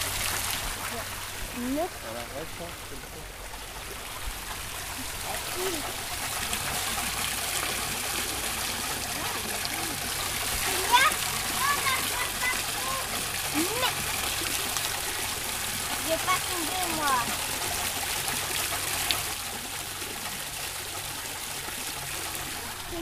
{"title": "Townhall - Mairie de Schiltigheim, France - The fountain with some kids around", "date": "2016-06-01 13:27:00", "description": "A fountain near by the townhall of Schiltigheim, in France.", "latitude": "48.61", "longitude": "7.75", "altitude": "146", "timezone": "Europe/Paris"}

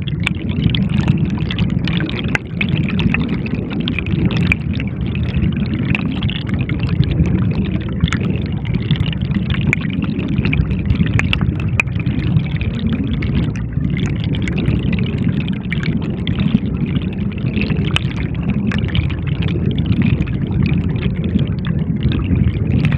{"title": "Underwater at Croome Court, Severn Stoke, Worcestershire, UK - Storm Drain", "date": "2018-03-08 12:41:00", "description": "A mono recording with a single piezo hydrophone in a torrent of rushing water after heavy rain. This is typical of the warped audio image from piezo elements unless they are bonded to a much larger resonator. The recorder was a Mix Pre 3.", "latitude": "52.10", "longitude": "-2.17", "altitude": "29", "timezone": "Europe/London"}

